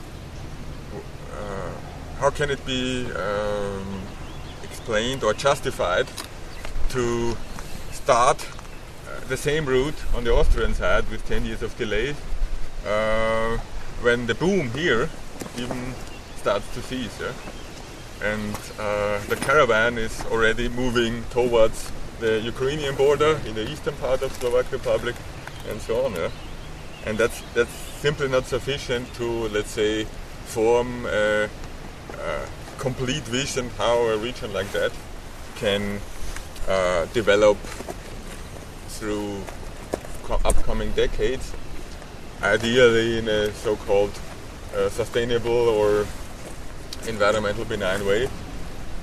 devinska nova ves, near VW logistics center
environmental and civil rights activist indicating the impact of the slovak automotive cluster on the region